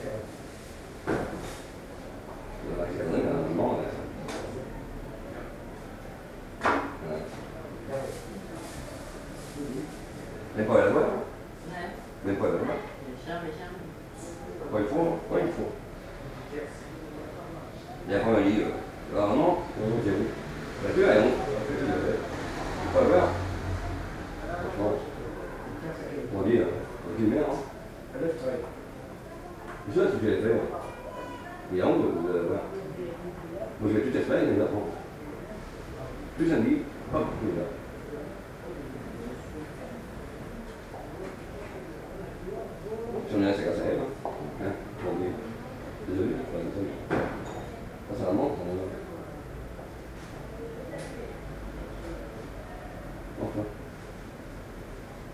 Lyon, Rue Hippolyte Flandrin, Aux Armes de Savoie, minidisc recording from 1999.